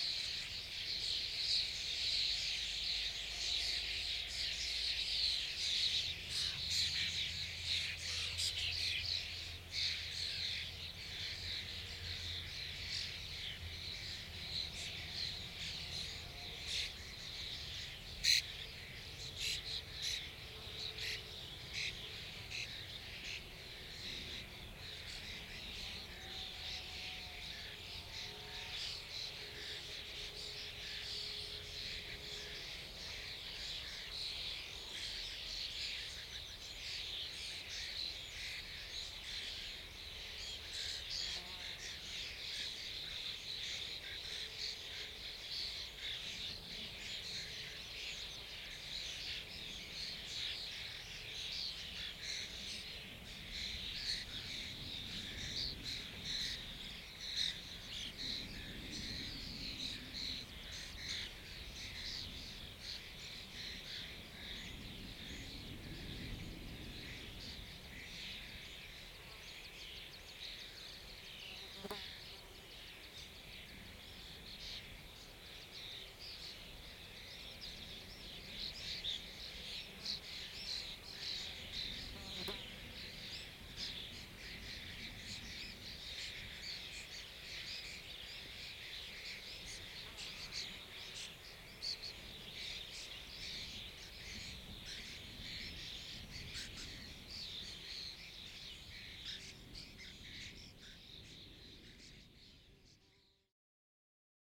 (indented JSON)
{
  "title": "Griūtys, Lithuania, a meadow soundscape",
  "date": "2021-06-03 18:15:00",
  "description": "birds feasting on freshly cut meadow grass, cars passing by on gravel road",
  "latitude": "55.46",
  "longitude": "25.64",
  "altitude": "130",
  "timezone": "Europe/Vilnius"
}